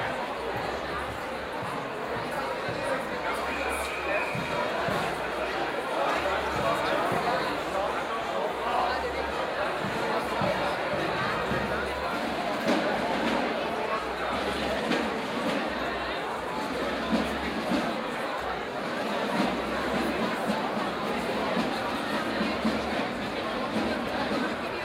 The mass is still excited by some sounds of two canons, the bells of the church are playing a tune, a marching band crosses the square in front of the church.